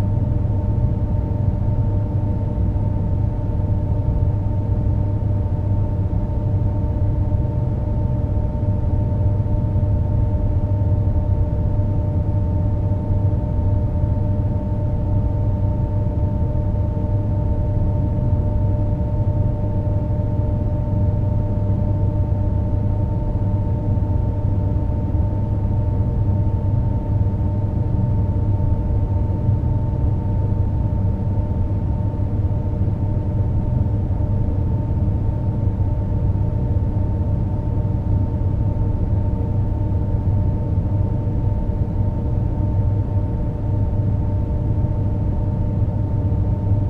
Quai Saint-Pierre, Toulouse, France - hydroelectric power station
The EDF Bazacle Complex, hydroelectric power station
7
turbines
Still in use
3000
KW
of installed power capacity
Captation : ZOOMH6 + Microphone AKG C411